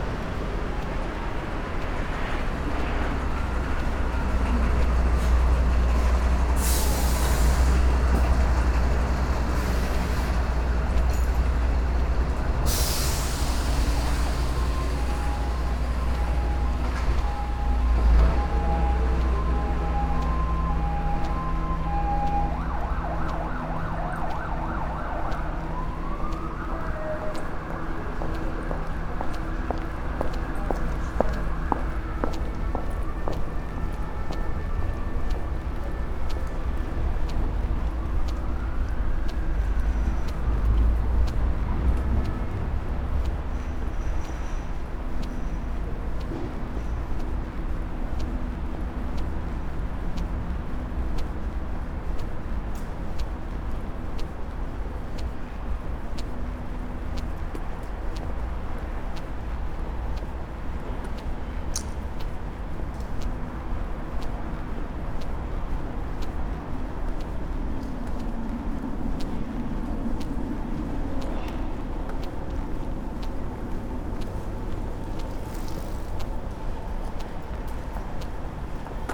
walking along popular promenade in Poznan during early hours. only a handful of people walk by, garbage man do their job, almost all shops are closed, pretty quiet and not much activity (roland r-07)
województwo wielkopolskie, Polska, 6 October 2020